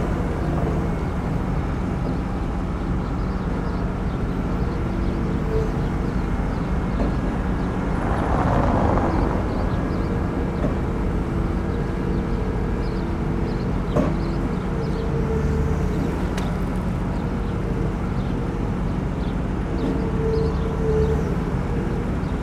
excavator disposing the debris of the demolished supermarket
the city, the country & me: march 6, 2012
Berlin, Germany